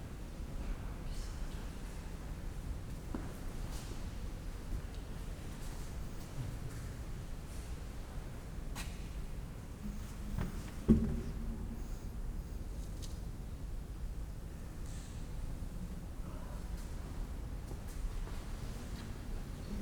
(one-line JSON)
{"title": "Limburg an der Lahn, Deutschland - inside cathedral ambience", "date": "2014-07-13 17:25:00", "description": "ambience late Sunday afternoon, inside the cathedral (Limburger Dom)\n(Sony PCM D50, DPA4060)", "latitude": "50.39", "longitude": "8.07", "altitude": "129", "timezone": "Europe/Berlin"}